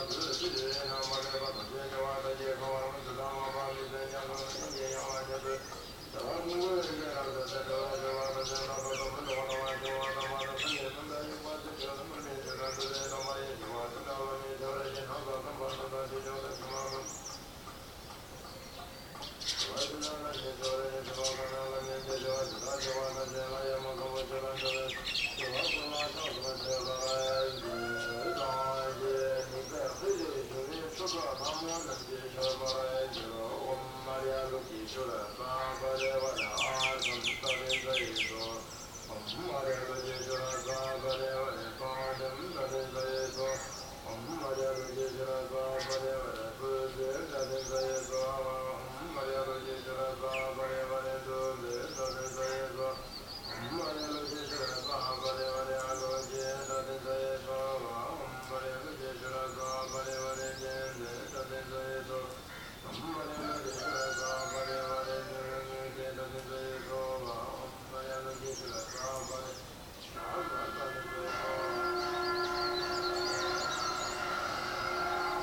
I have to guess at the time, but I know it was surprisingly early that we woke up to the sound of Buddhist chants being broadcast via loudspeaker over the village of Yuksom from the local temple. These chants went on for the whole day, and if my memory is correct also for the day after.
The chants are punctuated by percussion/horn crescendos, and interspersed with plenty of birdsong from outside the hostel window, and the occasional cockerel crow, engine or voice from the street.
Recorded on an OLYMPUS VN8600.
Sikkim, India, 12 June 2011